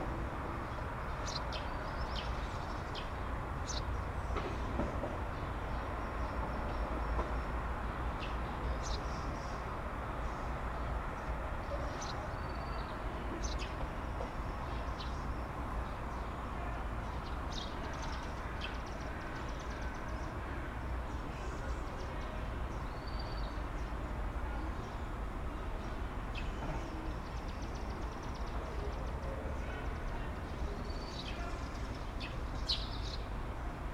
Nautilus, Dnipro, Ukraine - Nautilus - Outdoors [Dnipro]